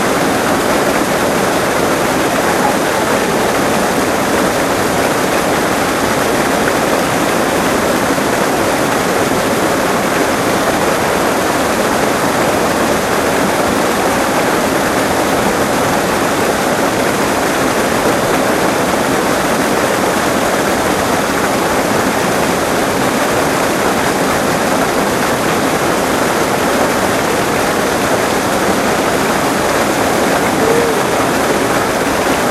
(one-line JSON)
{"date": "2000-08-07 15:02:00", "description": "Vernet Les Bains - Cascade des Anglais.\nMinidisc recording from 2000.", "latitude": "42.54", "longitude": "2.41", "altitude": "984", "timezone": "Europe/Paris"}